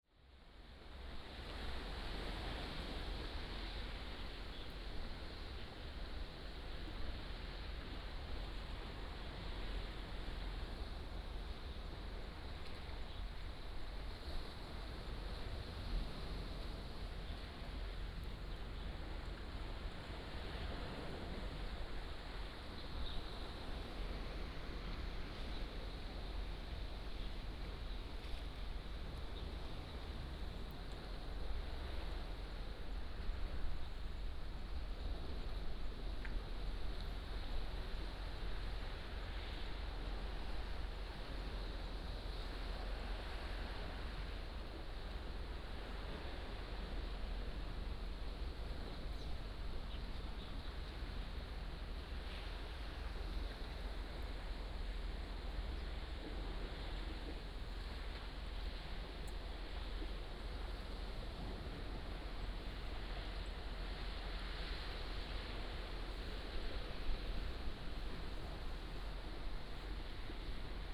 In the temple plaza, Birdsong, Traffic Sound, Sound of the waves